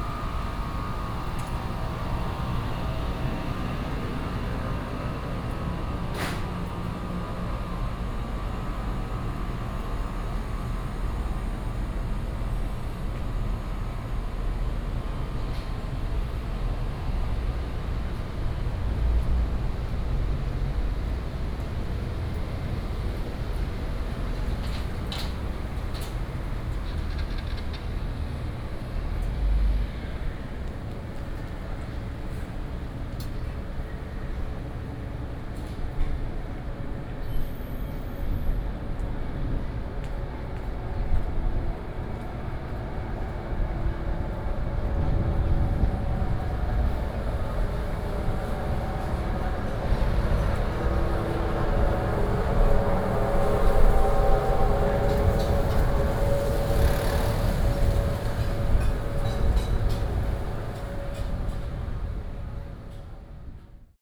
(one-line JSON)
{"title": "宜蘭市大東里, Yilan County - Small alley", "date": "2014-07-07 18:23:00", "description": "walking in Small alley, Air conditioning, sound, Traffic Sound, Very hot weather", "latitude": "24.76", "longitude": "121.76", "altitude": "16", "timezone": "Asia/Taipei"}